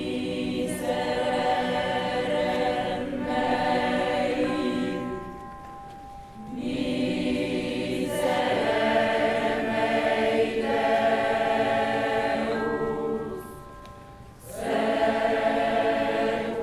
Sant Agnello, Italie - Red procession of Easter
For Easter (Pasqua in italian), in the little village of Sant' Agnello, near Sorrento, women and men wear red costumes for the first procession in the night, at midnight. They go, singing, from a church to an other church of the village.